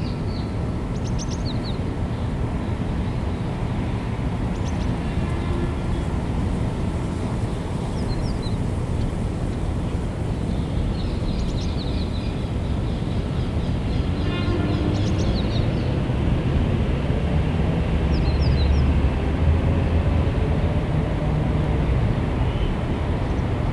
{"title": "Mamila Pool, Jerusalem - Birds and Cars and Noise on a Friday", "date": "2021-11-12 15:30:00", "latitude": "31.78", "longitude": "35.22", "altitude": "778", "timezone": "Asia/Jerusalem"}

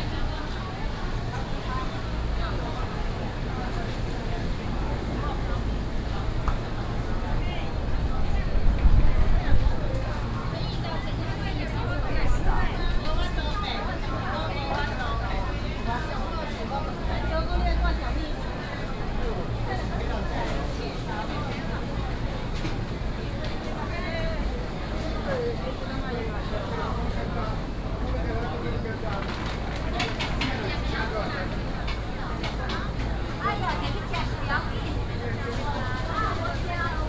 {"title": "新光黃昏市場, East Dist., Taichung City - Walking in the dusk market", "date": "2017-11-01 16:19:00", "description": "Walking in the dusk market, Traffic sound, vendors peddling, Binaural recordings, Sony PCM D100+ Soundman OKM II", "latitude": "24.14", "longitude": "120.71", "altitude": "94", "timezone": "Asia/Taipei"}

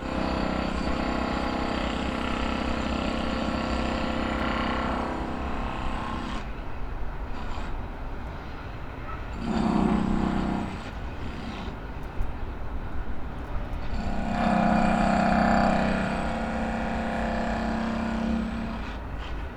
{"title": "Poznan, balcony - drill tides", "date": "2015-04-09 08:45:00", "description": "surge of drill rattle coming from a house across the field. sounds of rubble being tossed into a container.", "latitude": "52.46", "longitude": "16.90", "timezone": "Europe/Warsaw"}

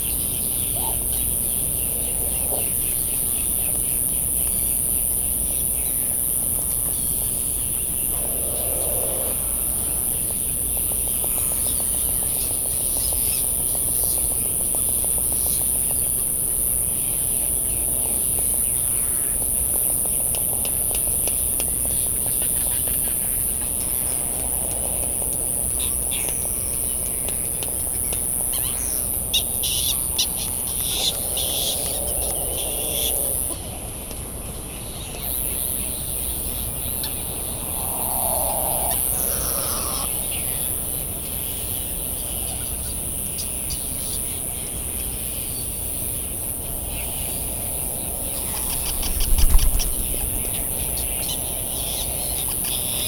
{"title": "Hawaiian Islands, USA - Bonin Petrel Soundscape", "date": "2012-03-13 21:50:00", "description": "Sand Island ... Midway Atoll ... On the path to the All Hands Club ... Sand Island ... Midway Atoll ... recorded in the dark ... open lavalier mics ... calls and flight calls of Bonin Petrel ... calls and bill claps from Laysan Albatross ... white tern calls ... cricket ticking away the seconds ... generators kicking in and out in the background ...", "latitude": "28.22", "longitude": "-177.38", "altitude": "16", "timezone": "Pacific/Midway"}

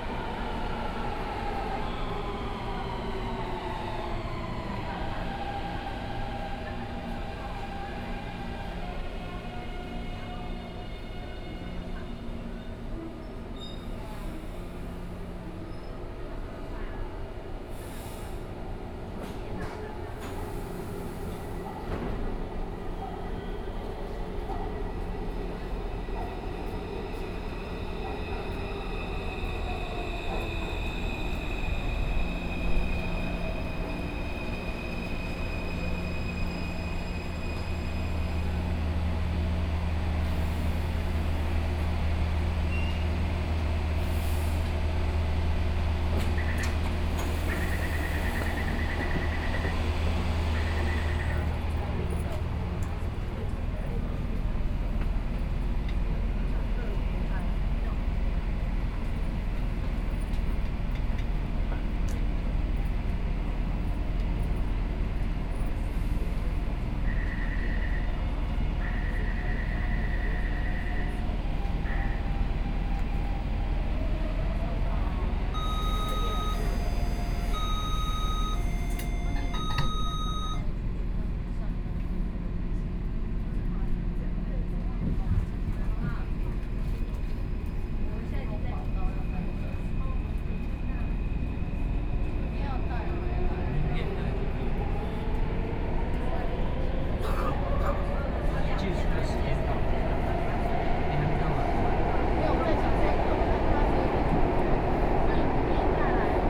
{"title": "Zhongxiao E. Rd., Taipei City - Blue Line (Taipei Metro)", "date": "2013-10-24 19:45:00", "description": "from Zhongxiao Xinsheng station to Zhongxiao Fuxing station, Arrive at the station and then out of the station, Binaural recordings, Sony PCM D50 + Soundman OKM II", "latitude": "25.04", "longitude": "121.54", "altitude": "18", "timezone": "Asia/Taipei"}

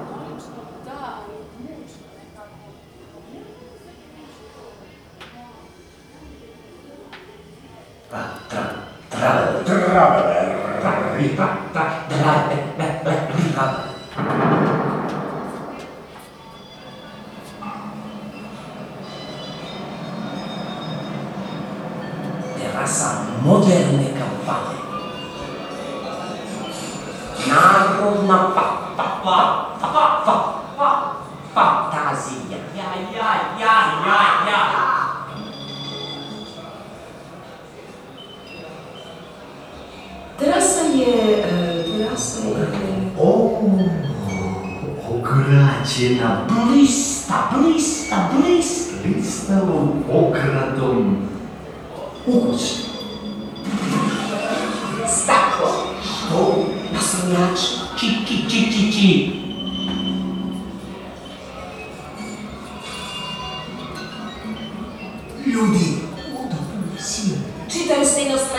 {"title": "Rijeka, sound instalation - in the engine room of Tito's boat Galeb", "date": "2011-06-04 20:15:00", "description": "an insert from the acoustic playing (june 2011) with a croatian avangarde text from the early 30-ties( futurism, dada); context: an exibition of anti-regime artists on former tito's boat galeb; loudspeakers at the bottom of the engine room, listeners standing on (or crossing)a bridge high above", "latitude": "45.33", "longitude": "14.43", "altitude": "7", "timezone": "Europe/Zagreb"}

